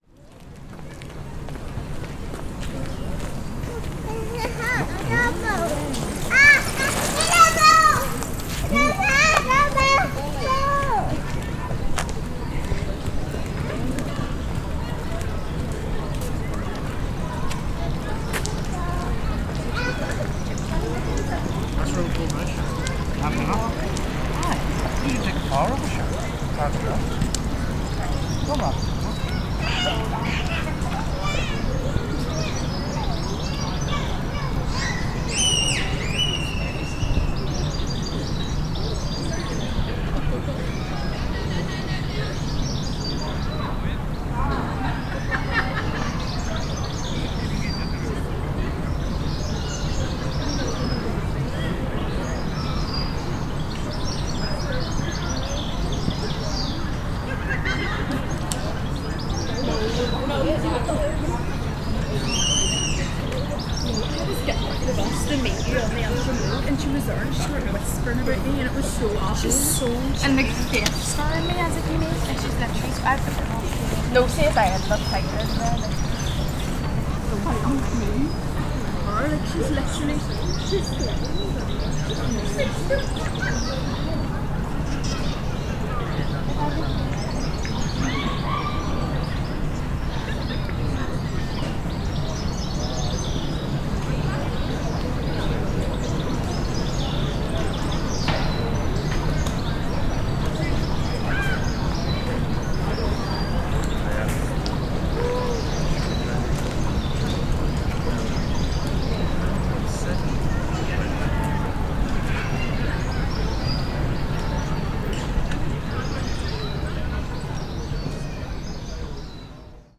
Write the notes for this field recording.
My proximity to Botanic Gardens allows me to take multiple walks through the park, it is a wonderful place to change scenery after constantly looking at the walls of my apartment. There are always people in and out of the park, either coming to take a stroll, or passing through to get to another destination. Whereas as a few months ago, this place was deserted and the sounds of nature and wildlife thrived, has now blended with human sounds once again. It is nice to see and hear people trying to find normality in their lives again.